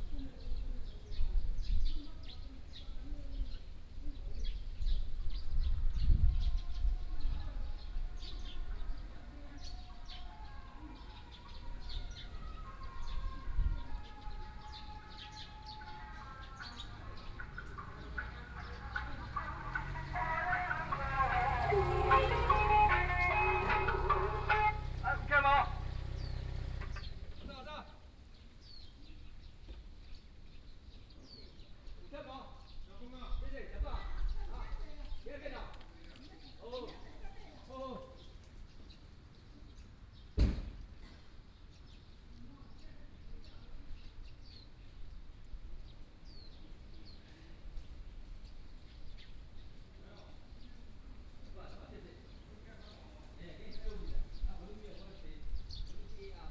鎮安宮, 壯圍鄉新南村 - In the temple plaza
In the temple plaza, Traffic Sound, Driving a small truck selling produce and live everyday objects
Sony PCM D50+ Soundman OKM II